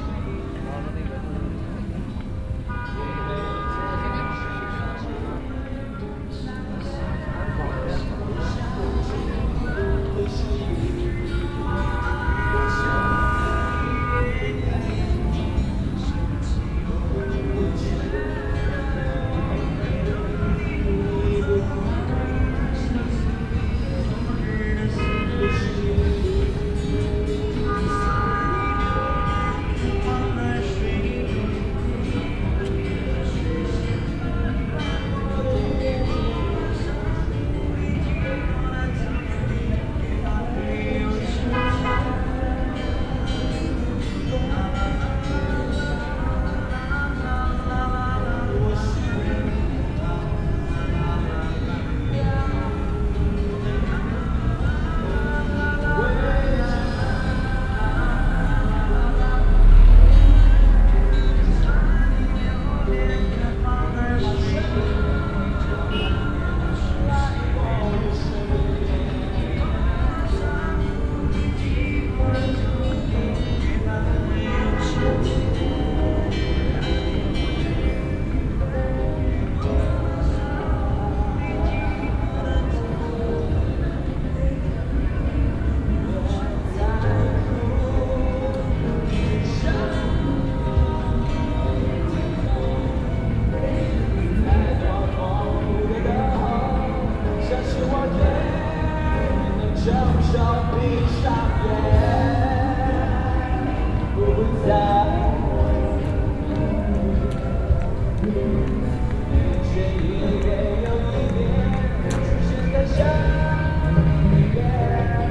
beijing cityscape - night atmosphere at hun jin, lakeside touristic funpark, with live music bars playing music parallel all outside - place maybe not located correctly -please inform me if so
project: social ambiences/ listen to the people - in & outdoor nearfield recordings